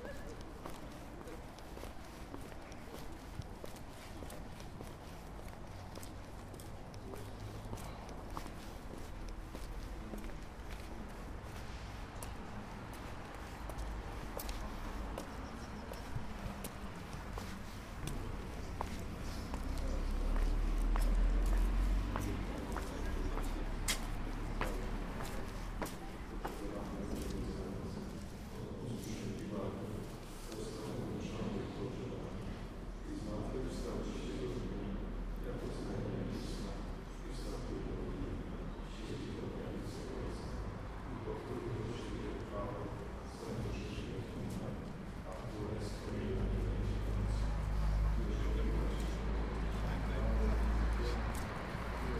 Katedra, Bialystok, Poland - Sunday celebrations
województwo podlaskie, Polska, European Union, 14 April 2013